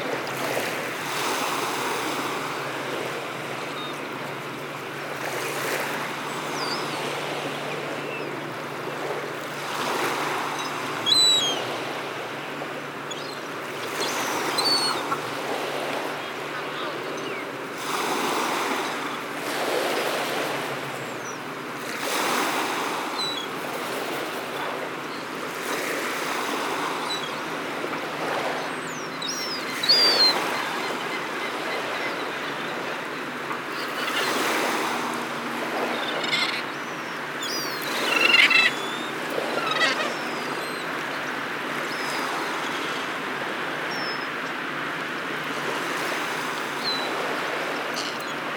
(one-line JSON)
{"title": "Bostanci Coast", "date": "2011-11-26 19:46:00", "description": "Bostanci emre yücelen sound recording binaural seagul seabirds crows waves relaxation", "latitude": "40.95", "longitude": "29.09", "altitude": "1", "timezone": "Europe/Istanbul"}